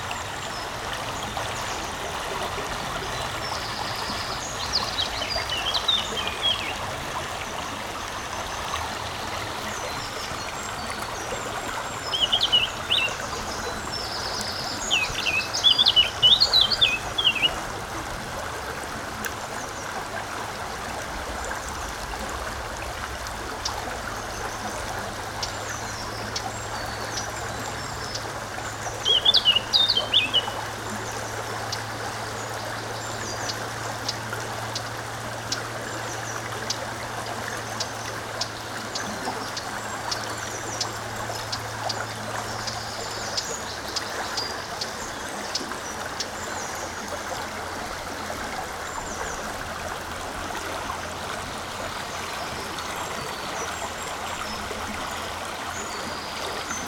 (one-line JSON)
{"title": "Mont-Saint-Guibert, Belgique - The river Orne", "date": "2016-04-10 14:50:00", "description": "Recording of the river Orne, in a pastoral scenery.\nRecorded with Audioatalia binaural microphones.", "latitude": "50.63", "longitude": "4.63", "altitude": "94", "timezone": "Europe/Brussels"}